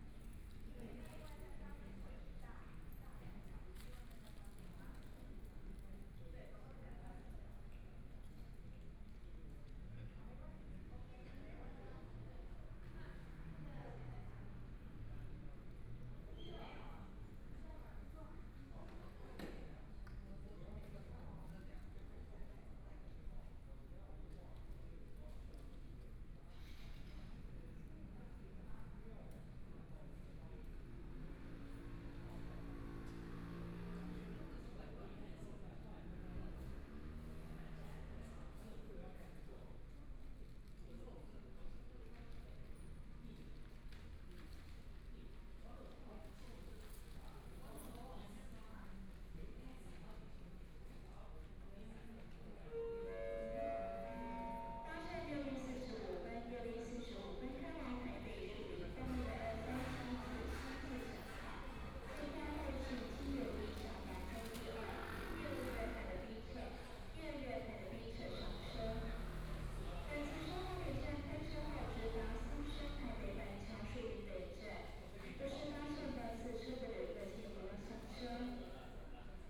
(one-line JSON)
{"title": "Hualien Station, Taiwan - The station hall at night", "date": "2014-02-24 18:40:00", "description": "The station hall at night\nPlease turn up the volume\nBinaural recordings, Zoom H4n+ Soundman OKM II", "latitude": "23.99", "longitude": "121.60", "timezone": "Asia/Taipei"}